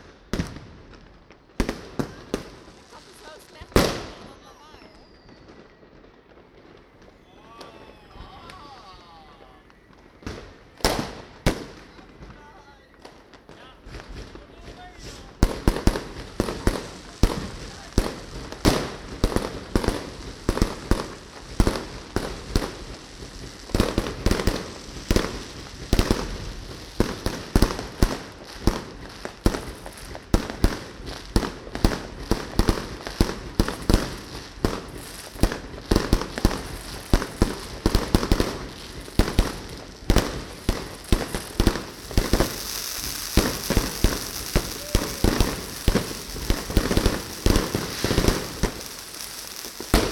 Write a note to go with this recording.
New Year celebration with fireworks. Zoom H2 recorder with SP-TFB-2 binaural microphones.